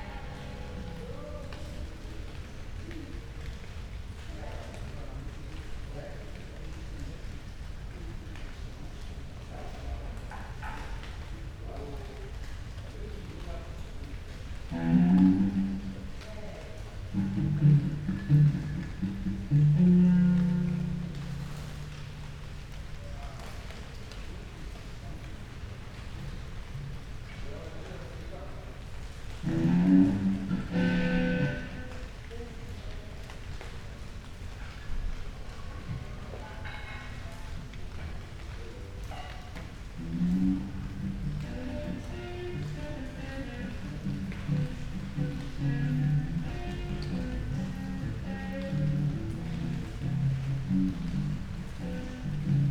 berlin, friedelstraße: backyard window - the city, the country & me: backyard window, raindrops, rehearsing musicians
raindrops hitting leaves, musicians rehearsing in a flat
the city, the country & me: july 25, 2014